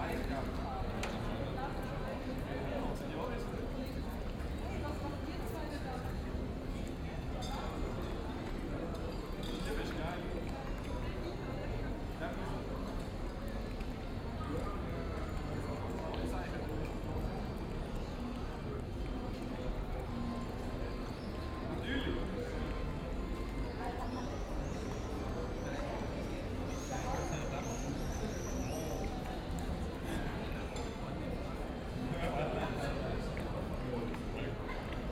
Aarau, Kronengasse, Evening, Schweiz - Kronengasse
After Kirchplatz back in the streets the walk continues through the Kronengasse, where again some people in restaurants chat